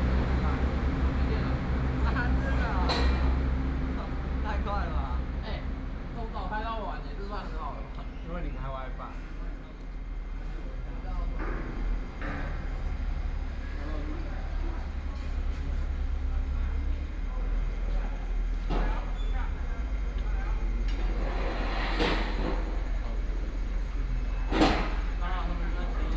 瑞芳車站, New Taipei City - On the platform
Ruifang District, New Taipei City, Taiwan, 13 November 2012, 19:04